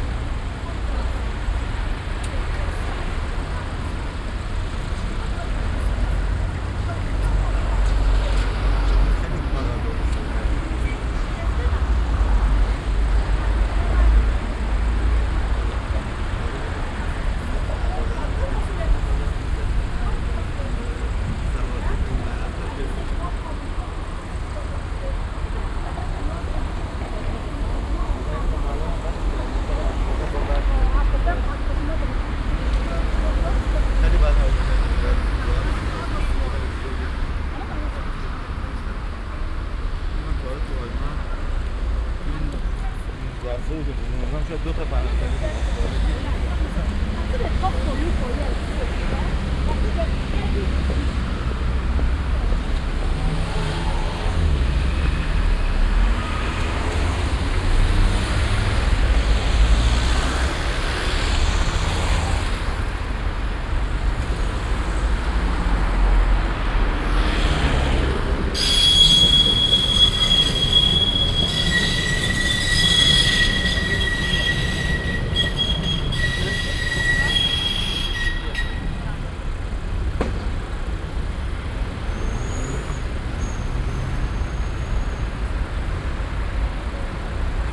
cologne, barbarossaplatz, bf verkehrsfluss roonstrasse - ring - cologne, barbarossaplatz, verkehrsfluss roonstrasse - ring 02
strassen- und bahnverkehr am stärksten befahrenen platz von köln - aufnahme: nachmittags
soundmap nrw: